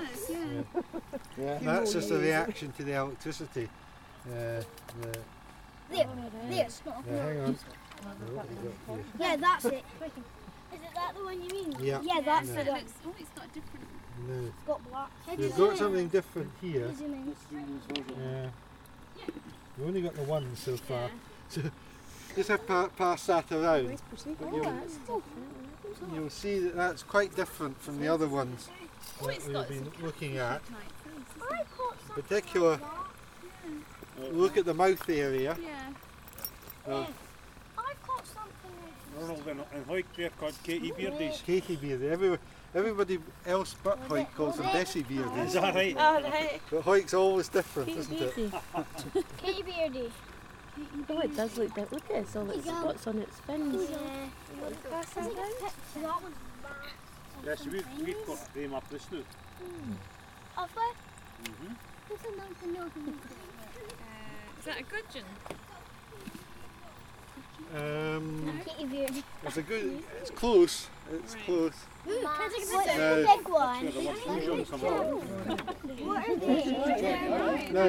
Volunteer Park, Hawick, Scottish Borders, UK - Fish ID, River Teviot
Biologist teaches families how to identify fish in the River Teviot in Hawick, Scottish Borders. How do you tell trout from salmon? Ron Campbell from the Tweed Foundation puts the children on the spot. Sound of generator in background.
13 June 2013, 13:31